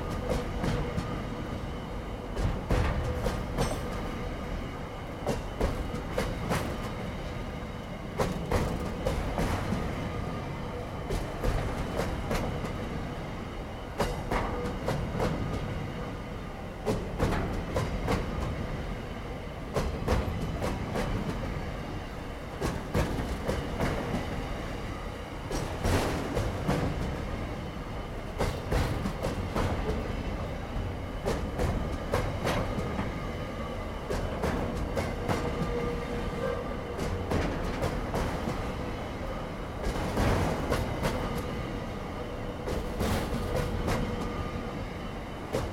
Fremantle, Western Australia - Freight Train Rolling Past - sprinklers at the end
A freight train rolling through Fremantle. The track has sprinklers that spray the tracks. My best guess is this is to minimise screetching as the train goes around this bend, as the buildings opposite are residential.
Fremantle WA, Australia